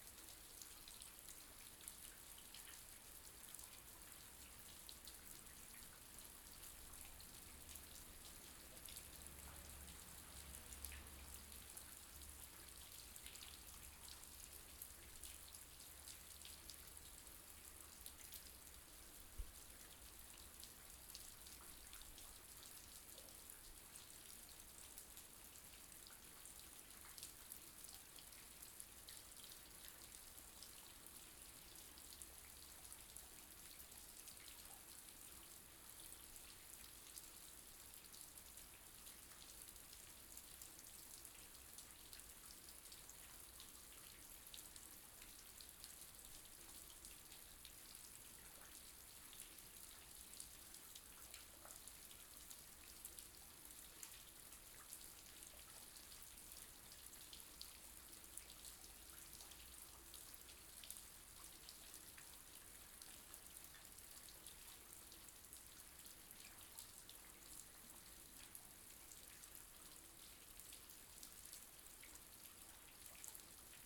Raining in Ourense (Spain). Recording made at a backyard on christmas eve.
Spain